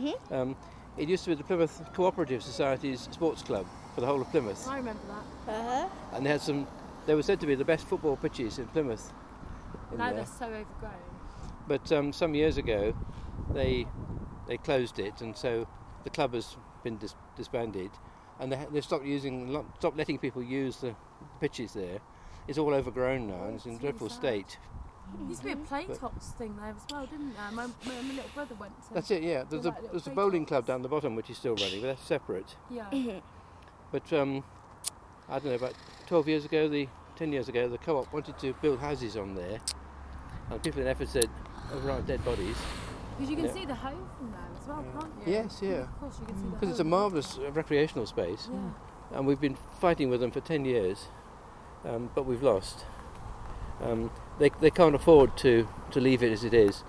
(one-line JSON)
{"title": "Efford Walk Two: Unity park - Unity park", "date": "2010-09-24 17:14:00", "latitude": "50.39", "longitude": "-4.11", "altitude": "99", "timezone": "Europe/London"}